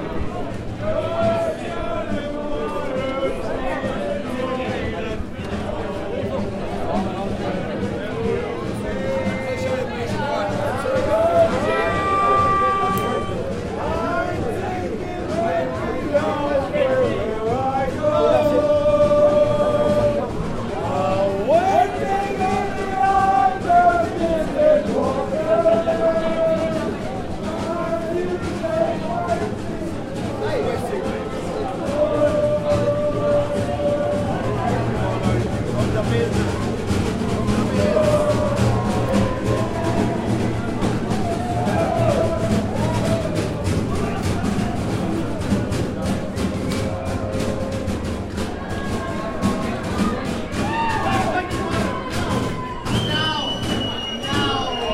Galway City, Co. Galway, Ireland - The Sunken Hum Broadcast 76 - St. Patrick's Eve in Galway
I was on a quiet street in Galway and heard a busker playing the fiddle around the bend. As I turned the corner to catch a glimpse of the musician I saw a street filled with utter madness. The busker only played the chorus of tunes as people walked by and then completely stopped when they had passed beyond the point of tossing in a few coins. The he started right back in on the same chorus as the next person approached. As I continued down there were groups of lads singing arm in arm, lost tourists, wobbly heeled ladies and at the top of the road, a group of frenized improv drummers beating on rubbish bins.
This is recorded while walking about a block with a Zoom H4 held inside a canvas bag to block the wind.